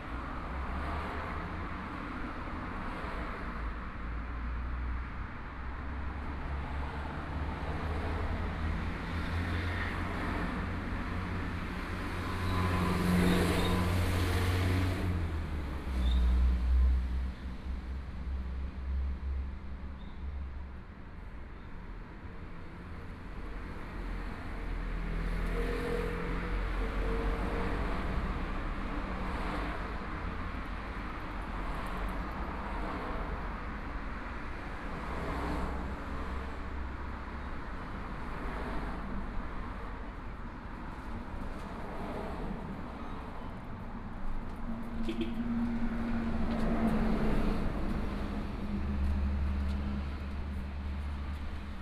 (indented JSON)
{
  "title": "South-Link Highway, Taiwan - Traffic Sound",
  "date": "2014-01-17 12:41:00",
  "description": "Traffic Sound, In front of the convenience store, Binaural recordings, Zoom H4n+ Soundman OKM II ( SoundMap20140117- 2)",
  "latitude": "22.77",
  "longitude": "121.09",
  "timezone": "Asia/Taipei"
}